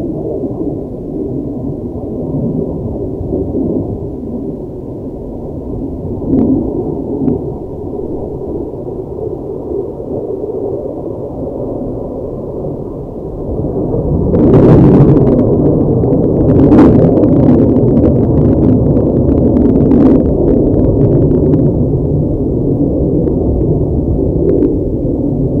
Keeler, CA, USA - Airplanes flying over Owens Lake
Metabolic Studio Sonic Division Archives:
Airplanes flying over Owens Lake. First airplane you hear is flying very low to ground. Occasional traffic sound. Recorded with Zoom H4N
California, United States, 25 August, 11:00